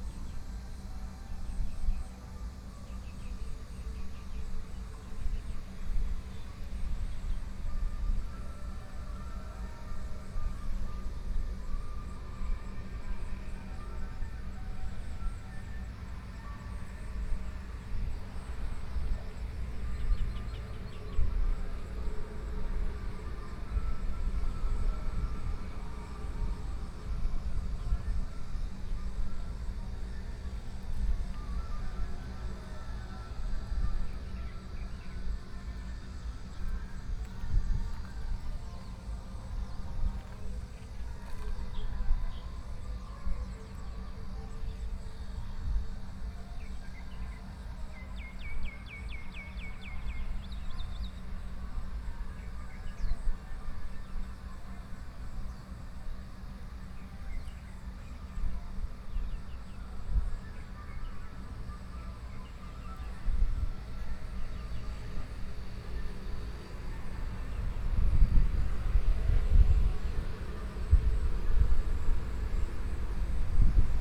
In the river bank, Hot weather, Traffic Sound, Birdsong
Wujie Township, Yilan County, Taiwan